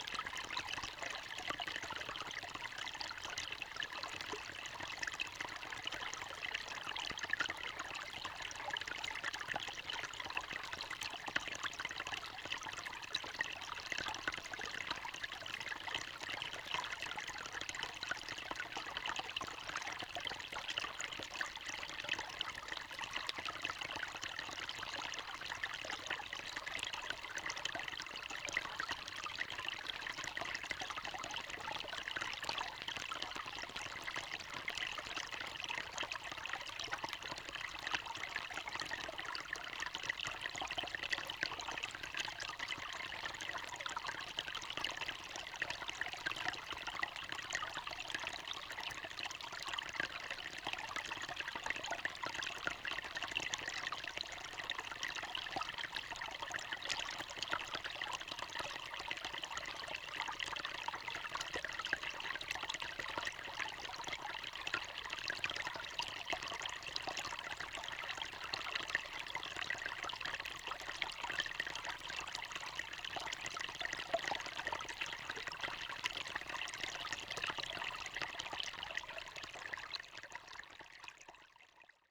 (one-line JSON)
{"title": "Lithuania, Utena, on the first ice", "date": "2012-01-17 15:25:00", "description": "contact microphone on the first ice in the rivers turn", "latitude": "55.50", "longitude": "25.54", "altitude": "136", "timezone": "Europe/Vilnius"}